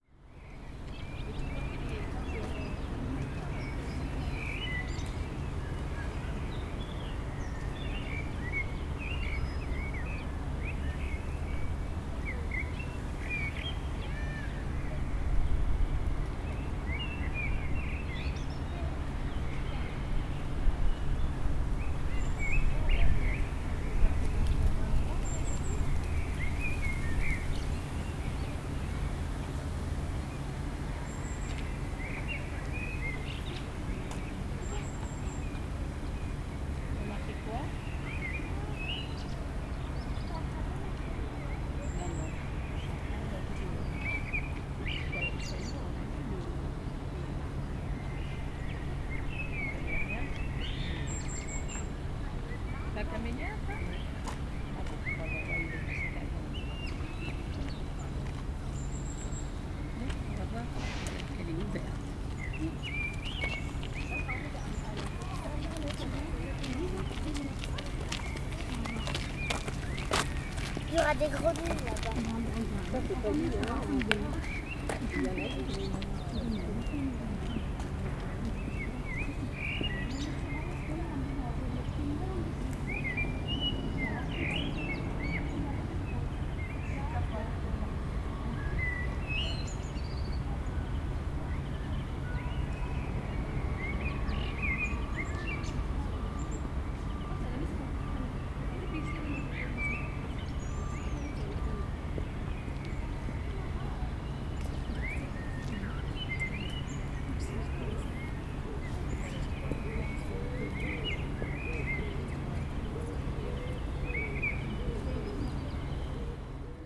Jardin Botanique de Strasbourg par une journée ensoleillée